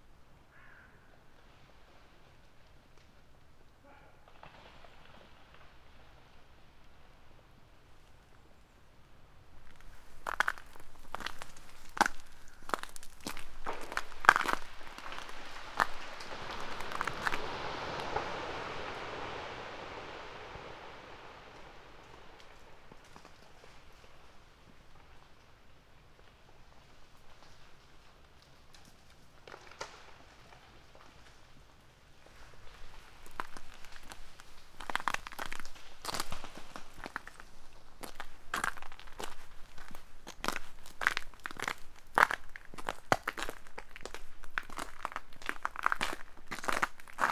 path of seasons, ponds, maribor - tight embrace of frozen rain
beloved trees are breaking all over